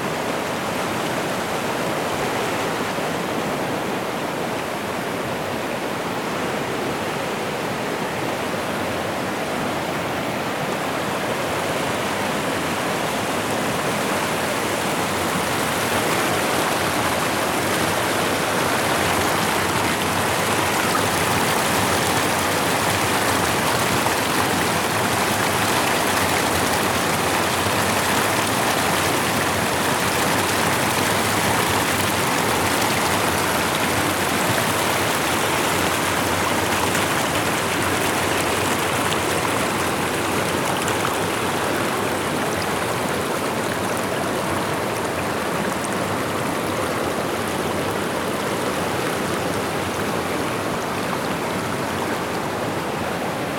Mindo Forest Reserve, Équateur - Rio Mindo
the sounds of the river
Ecuador, 24 December 2014